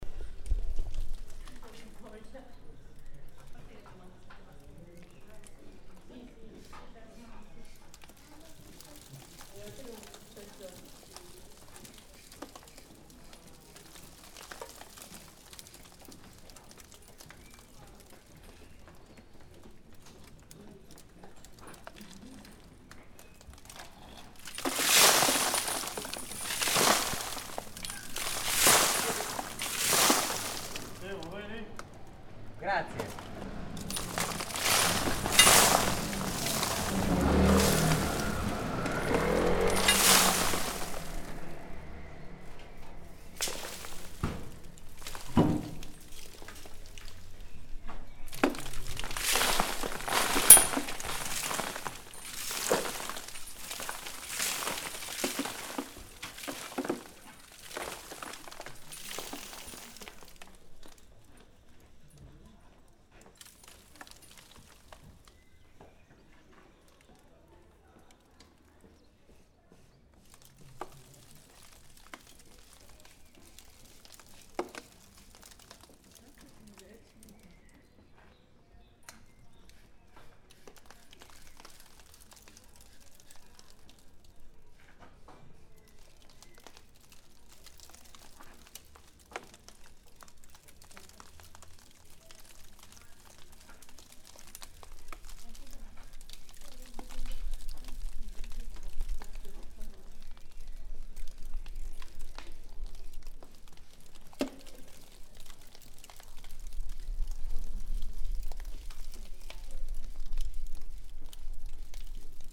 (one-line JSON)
{"title": "Perugia, Italy - falling debris", "date": "2014-05-23 14:00:00", "description": "work in progress in the street, small debris falling down from a wall. people walking and street ambience", "latitude": "43.11", "longitude": "12.38", "altitude": "448", "timezone": "Europe/Rome"}